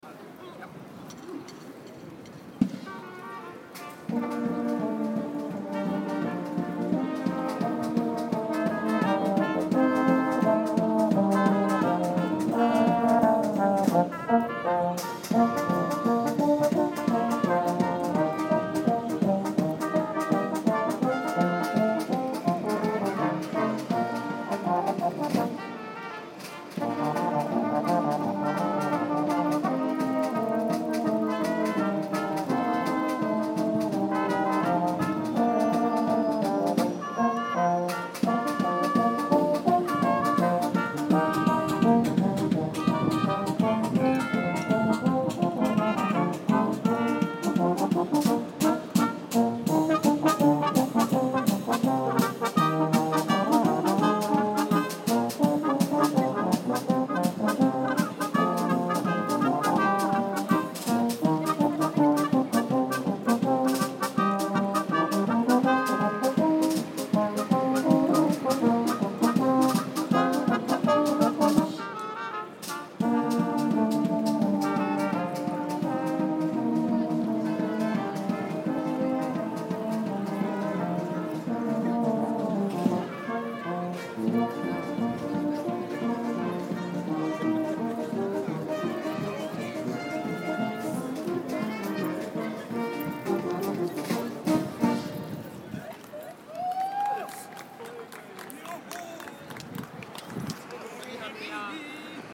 Altstadt-Nord, Köln, Deutschland - Bayrische Blasmusik @ Köln Bavarian brass band music @ Cologne
bayrische Blasmusik, Bavarian brass band music, Köln, Cologne, Deutschland, Germany, Hauptbahnhof, Main station, Kölner Dom, Cologne Cathedral